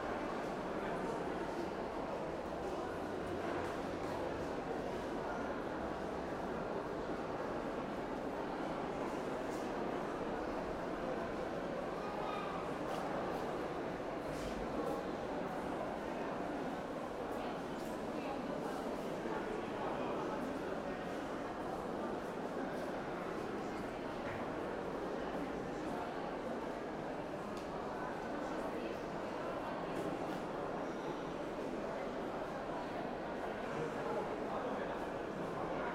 St Petersburg, Russia, Hermitage - People
9 August, 16:46